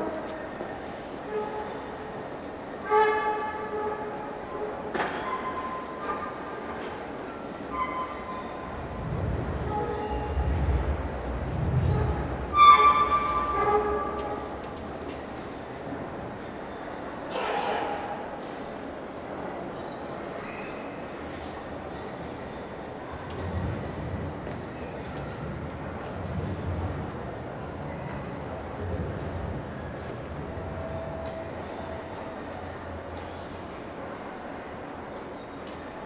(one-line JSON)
{"title": "Kaponiera underpass, Poznan - trumpet escalator", "date": "2019-03-16 16:07:00", "description": "one of the escalators makes random squeaks that reverberate all around the ring underpass. It sounds as a street musician played an experimental free jazz piece on a trumpet. it's particularly interesting as it is a common spot for street musicians to perform in Poznan due to high pedestrian traffic in the underpass. (roland r-07)", "latitude": "52.41", "longitude": "16.91", "altitude": "84", "timezone": "Europe/Warsaw"}